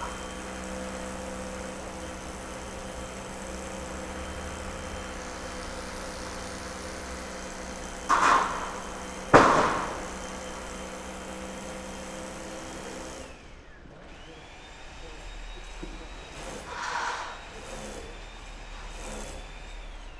Gerüstbau. Große Bergstraße. 07.10.2009 - Renovierungsarbeiten am Forum Altona
Altona, Hamburg, 7 October 2009, ~13:00